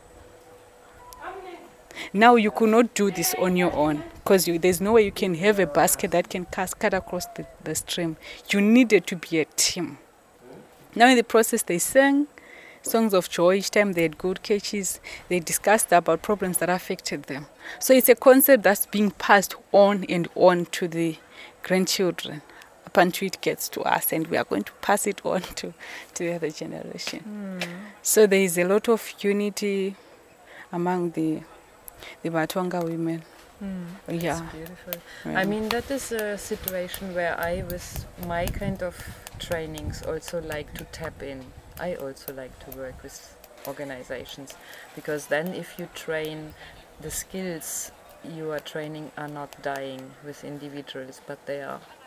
Office of Basilwizi Trust, Binga, Zimbabwe - Abbigal tells about BaTonga women...
We are sitting with Abbigal Muleya outside Basilwizi Trust’s Office in Binga, some voices from people working inside, and a herd of cows passing… the midday breeze is a pleasant cooling, unfortunately though it occasionally catches the mic…
Abbigal describes for listeners the spirit of unity and the concept of team-working among the BaTonga women she is working with, be it in pursuing traditional women’s craft like basket-weaving, or recently in an all-women fishery project. Abbigal is one of the founder members of ZUBO Trust, an organization aiming to enable women to realize, enhance and maximize their social, economic and political potential as citizens of Zimbabwe.